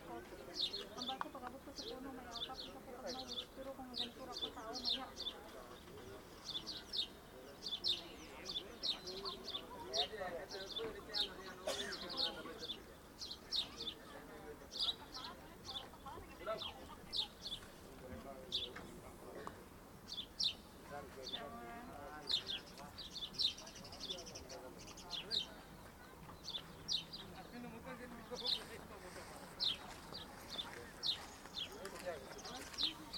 Jaww, Bahreïn - "Three of Life" - Bahrain
"Three of Life"
Désert du Barhain
ambiance à "l'intérieur de l'arbre" et de son foisonnement de branches
المحافظة الجنوبية, البحرين